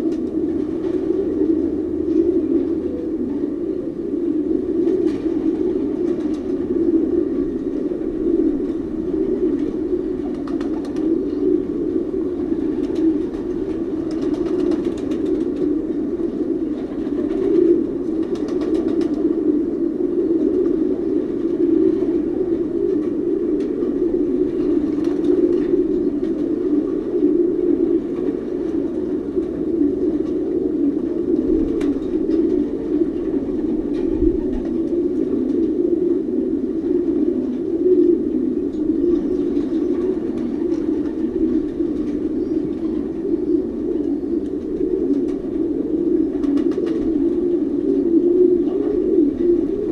Pigeons, R.Delbekestraat Zoersel, KLANKLANDSCHAP#3 (BAS)
Zoersel, Belgium